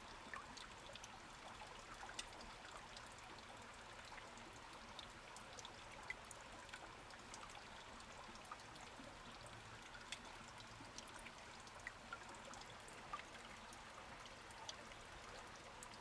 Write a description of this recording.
streams, Sound of water droplets, Zoom H6 XY